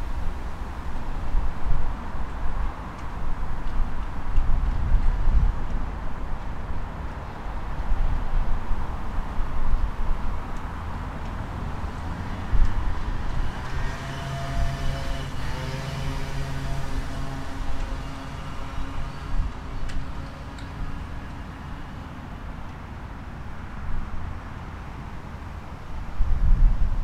Queens University Belfast
Not much has changed with Queen’s University Belfast, they have opened their front doors again for limited access to the Graduate School and Library. There is some staff working around the building, but not much other information that I would know of. Standing in front of the building you can see warning signs of keeping your distance and to protect yourself. The harsh winds throwing around a metal object in the distance generated this odd feeling that our return to the city is creating a lot more energy in the environment, that we are trying to find our place again amidst the aftermath of the lockdown. We are trying to figure out what this new normal will be like, will it clash or be embraced.